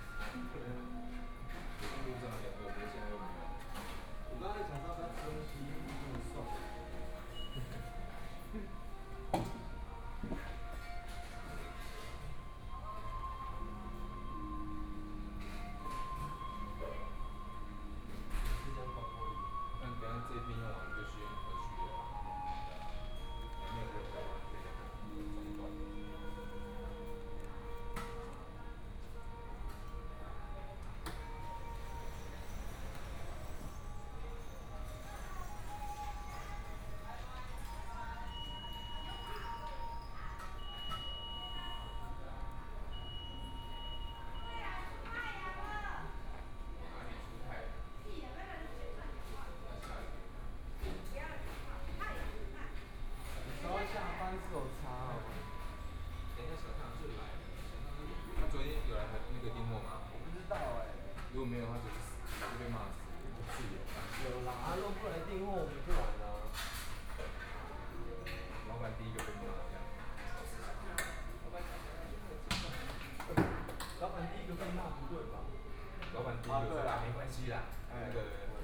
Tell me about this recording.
In the fast food restaurant, McDonald's, Please turn up the volume, Binaural recordings, Zoom H4n+ Soundman OKM II